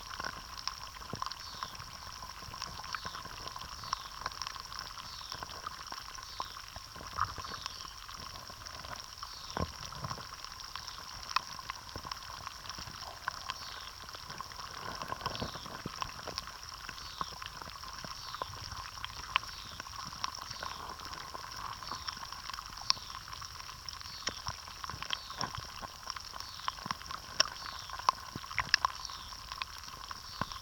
Vyžuonos, Lithuania, lake Lydekis underwater
Hydrophone. Some distant species.